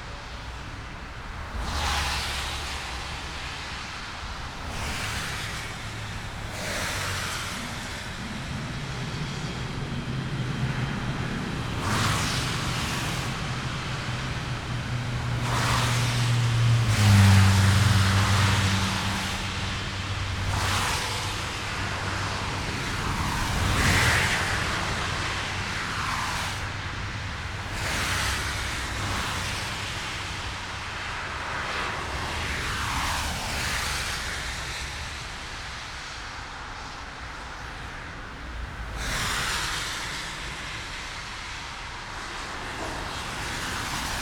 Karow, Buch, Berlin - Autobahn sonic violence
on top of Autobahn bridge, between Berlin Buch and Karow, sound of cars and vans on wet asphalt
(Sony PCM D50, DPA4060)